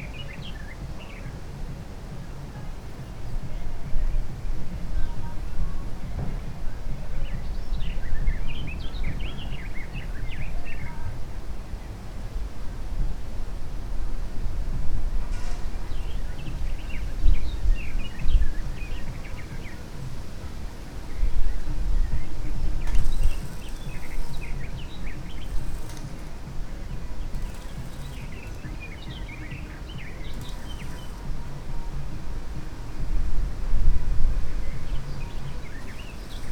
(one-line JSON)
{"title": "Letowko, near Choczewskie Lake - welding", "date": "2019-06-15 13:13:00", "description": "man welding in a shed, moving about some metal objects, radio playing disco polo music. dog barking around the property. (roland r-07)", "latitude": "54.73", "longitude": "17.93", "altitude": "54", "timezone": "Europe/Warsaw"}